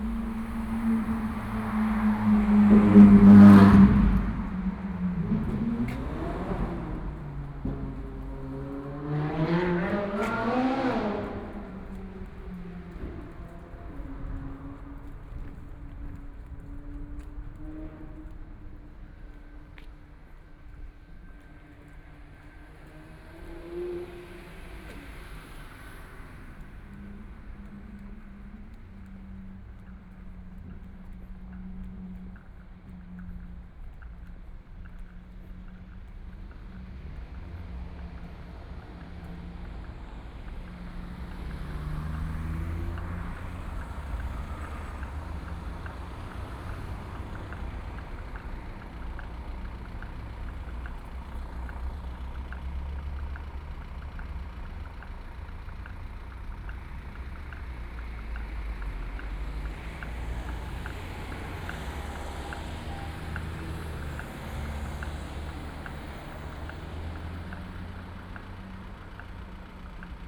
Schwanthalerstraße, 慕尼黑 Germany - walking in the Street
walking in the Street.traffic sound
Munich, Germany, May 2014